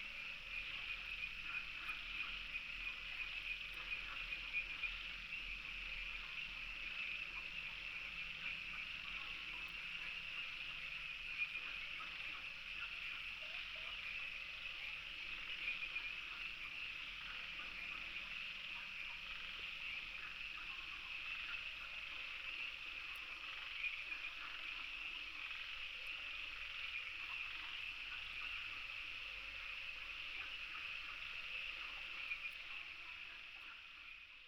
蓮華池藥用植物標本園, Yuchi Township - Frogs chirping
Frogs chirping
Binaural recordings
Sony PCM D100+ Soundman OKM II